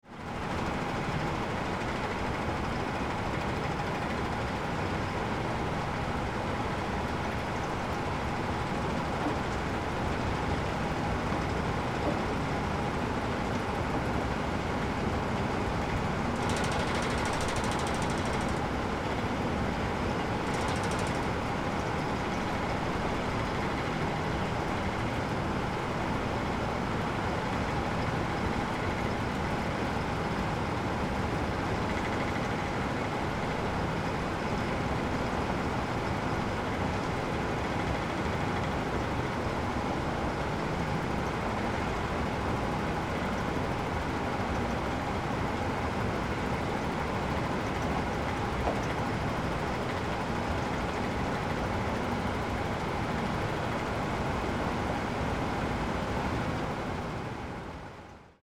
Málkov, Czech Republic - Nástup mine - Conveyer belt
The sound at the start of the coal's long (sometimes kilometers) conveyer belt journey to the grinding plant. Here the lumps are crushed to a uniform 6cm size appropriate for burning in power stations